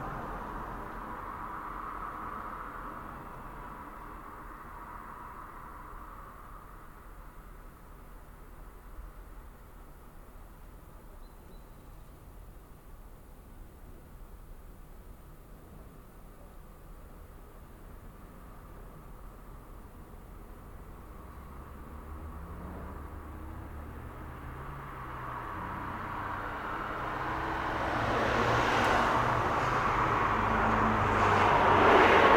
An den Steinfeldern, Wien, Österreich - An den Steinfeldern
redorded with Tascam DR-07 in the bike basket (metal-grid) of bike on the sidewalk, between car and wall from MAN-Corp.; lot of traffic in this industrial area.
3 March 2021